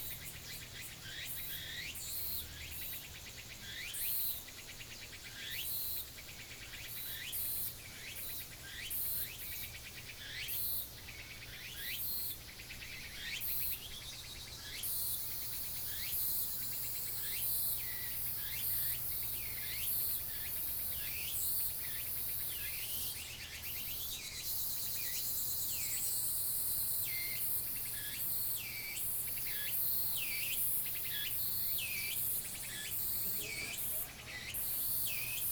Woody House, 南投縣埔里鎮桃米里 - Bird calls
Bird calls
Binaural recordings
Sony PCM D100+ Soundman OKM II